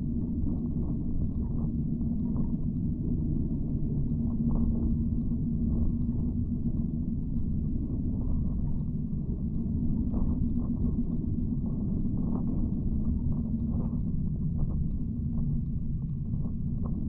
lake Kertuoja, Lithuania, paracord drone
20 meters long paracord between the trees .contact microphones capturing the drone